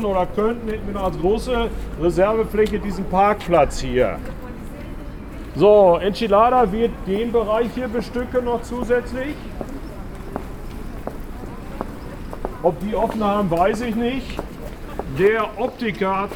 Martin-Luther-Strasse, Hamm, Germany - Rundgang durchs Lutherviertel (2)
We are joining here a guided tour through the city’s art and artists’ quartiers, the “Martin-Luther Viertel” in Hamm. Chairman Werner Reumke leads members of the area’s support associations (“Förderverein des Martin-Luther-Viertels”) through the neighborhood. Only two weeks to go till the big annual Arts-Festival “La Fete”…
Wir folgen hier einer ausserordentlichen Stadtführung durch das Martin-Luther-Viertel, das Kunst und Künstlerviertel der Stadt. Werner Reumke, Vorsitzender des Fördervereins begeht das Quatier zusammen mit Vereinsmitgliedern. Nur noch zwei Wochen bis zum grossen jährlichen Kunst- und Kulturfest “La Fete”…
recordings are archived at:
18 August 2014, 19:14